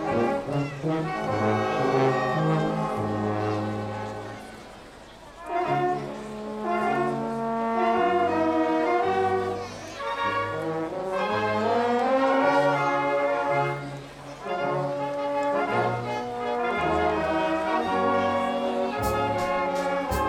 Pag, Gradska Glazba 2008
people on main town square are listening and youngster are playing around...